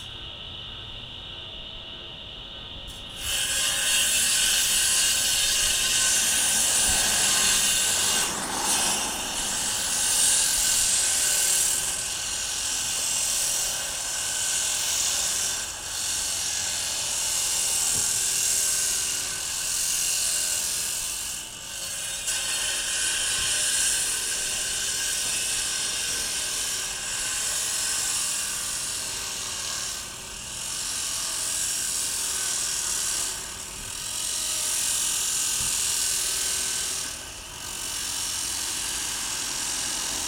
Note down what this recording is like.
Auf der anderen Seite der Straße schneidet ein Arbeiter einen Pflasterstein. On the other side of the road, a worker cutting a paving stone.